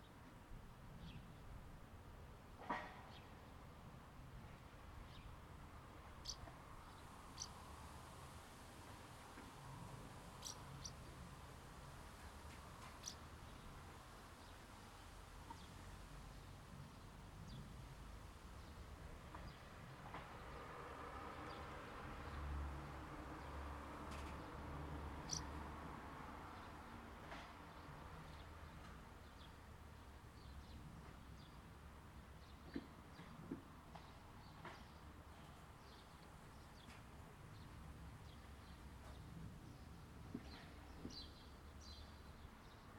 Common house martin - Delichon urbicum
Common house martin (Delichon urbicum) singing. Village life on a Saturday afternoon. Recorded with Zoom H2n (XY, on a tripod, windscreen) from just below the nest.
Varaždinska županija, Hrvatska